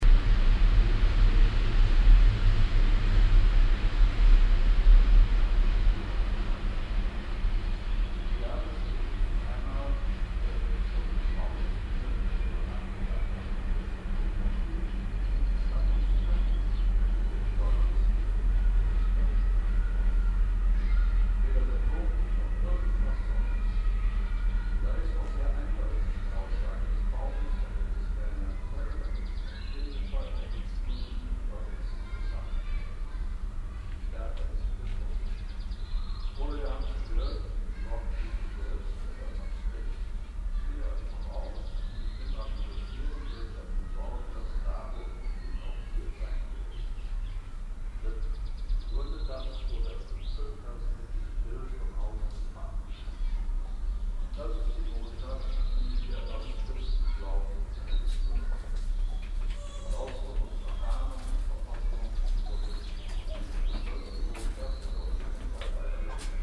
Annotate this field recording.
trauergottesdienst und zu spät kommende gäste, stereofeldaufnahmen im mai 08 - morgens, project: klang raum garten/ sound in public spaces - in & outdoor nearfield recordings